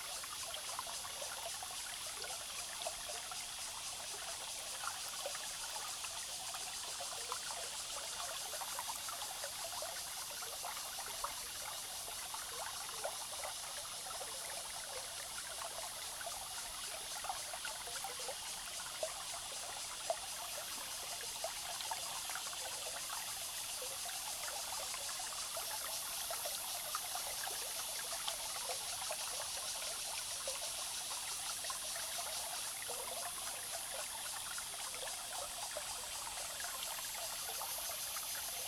種瓜坑溪, 成功里Puli Township - Stream sound
The sound of the stream
Zoom H2n MS+XY +Spatial audio